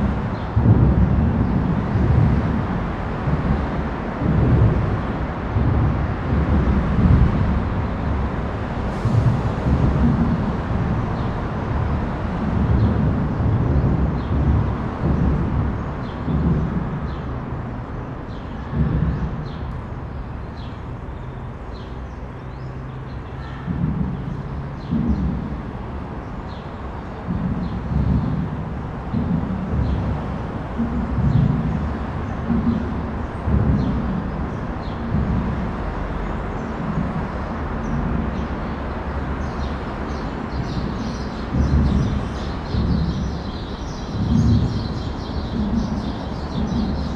Puente del Alamillo, Sevilla, Spain - Under the Alamillo Bridge, Seville Spain
Recording made under the Alamillo Bridge. You can here birds singing, fish jumping, and traffic overhead
Recorder - Zoom H4N. Microphones - pair of Uši Pro by LOM